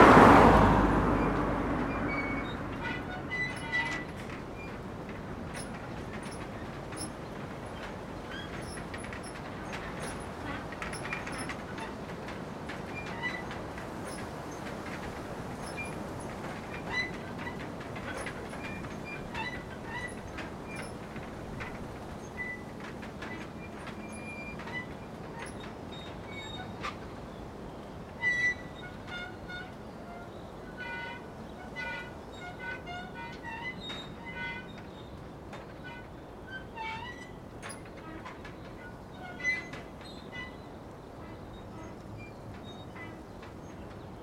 Voie Romaine, Saint-Georges-lès-Baillargeaux, France - Château deau
MS SCHOEPS/ MicroMic/ ZOOM H6